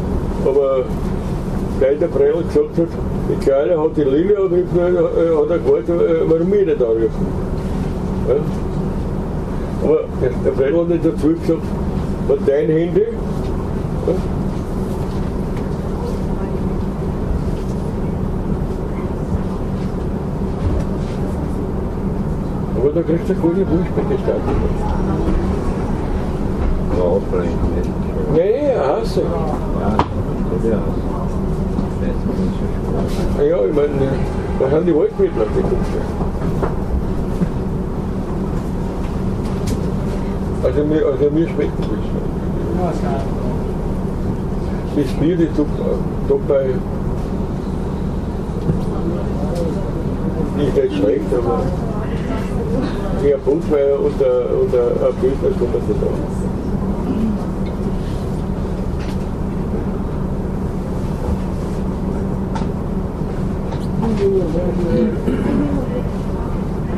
{"title": "goetzendorf/leitha", "date": "2011-03-17 10:28:00", "description": "train delayed at the train station of goetzendorf, local passengers talking", "latitude": "48.03", "longitude": "16.58", "altitude": "167", "timezone": "Europe/Vienna"}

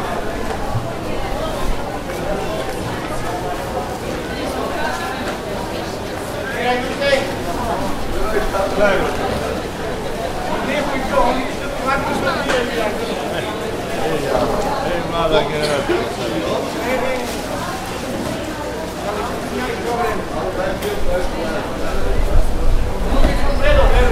{"title": "Zeleni venac, (Green market) Belgrade - Pijaca (Green market)", "date": "2011-06-14 14:42:00", "latitude": "44.81", "longitude": "20.46", "altitude": "98", "timezone": "Europe/Belgrade"}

